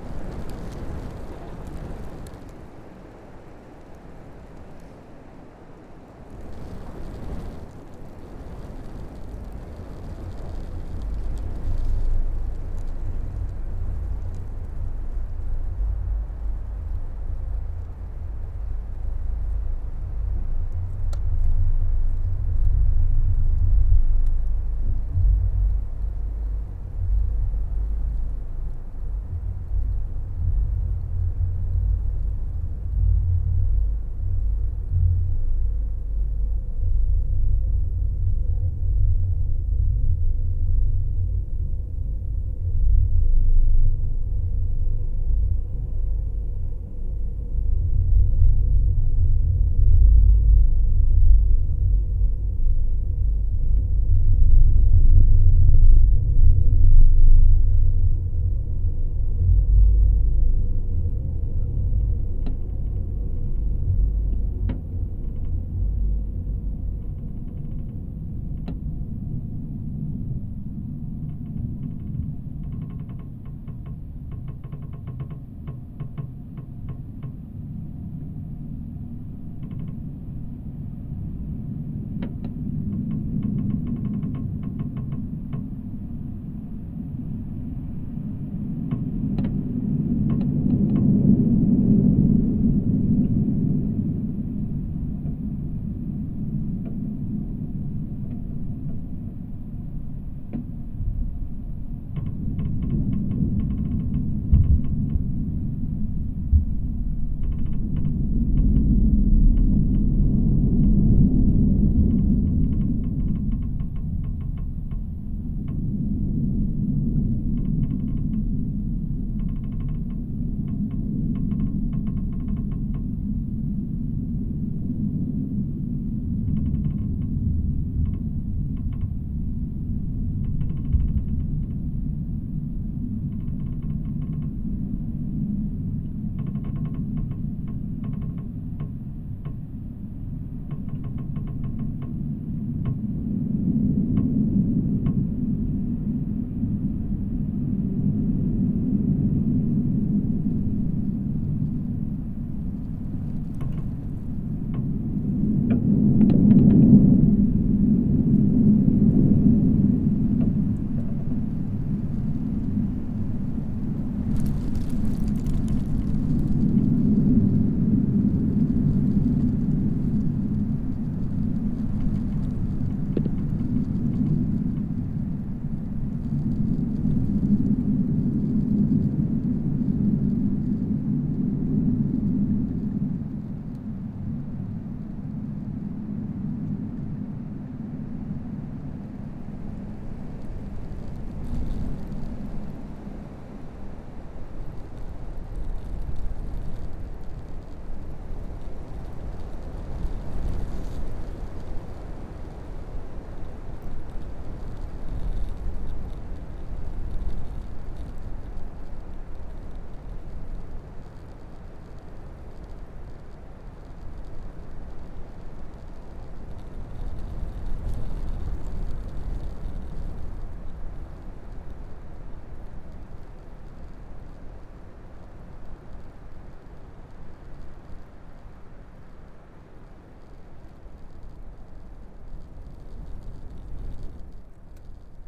Sound study of remaining building of "Blagodat" manor owned by parents of Russian-German-Swiss Expressionist painter Marianne von Werefkin (Mariánna Vladímirovna Verëvkina). This manor is the place where she began to paint in her young age.
Recorded with different techniques: omni, contact, geophone.